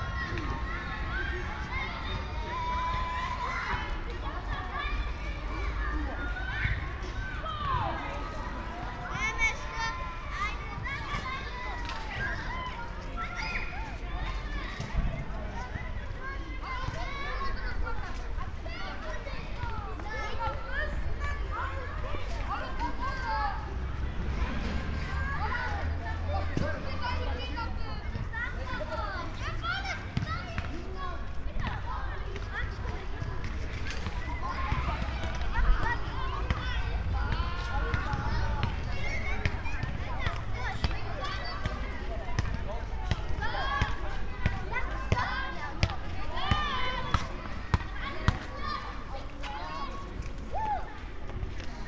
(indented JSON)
{
  "title": "Mülheim, Köln, Deutschland - playground, evening ambience",
  "date": "2016-08-15 20:20:00",
  "description": "Köln Mülheim, summer evening, playground\n(Sony PCM D50, Primo EM172)",
  "latitude": "50.96",
  "longitude": "7.01",
  "altitude": "51",
  "timezone": "Europe/Berlin"
}